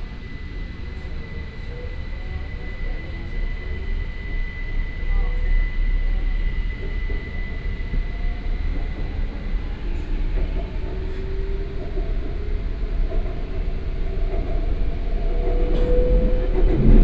橋頭區, Kaohsiung City - Red Line (KMRT)
from Metropolitan Park station to Ciaotou Sugar Refinery station
Qiaotou District, Kaohsiung City, Taiwan, May 2014